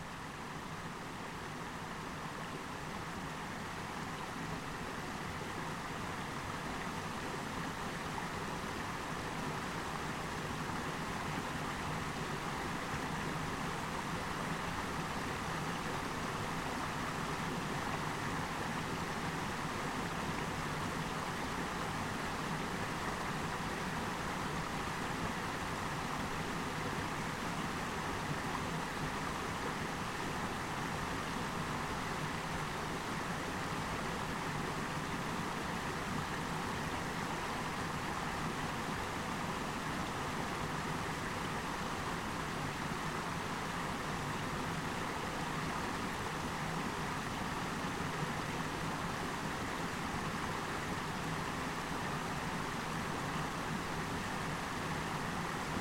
Leliūnų sen., Lithuania, spring's streamlet